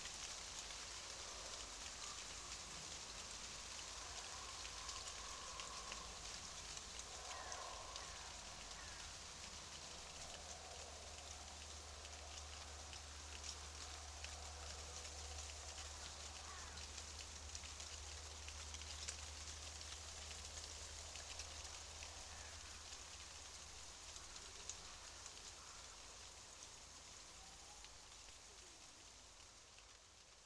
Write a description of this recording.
small microphones in the branches of shivering aspen tree